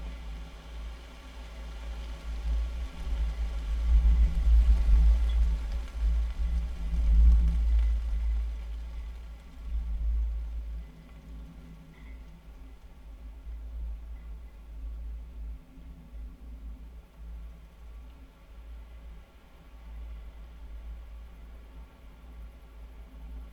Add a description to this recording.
small microphones placed in vertical metallic tube. play of wind and resonances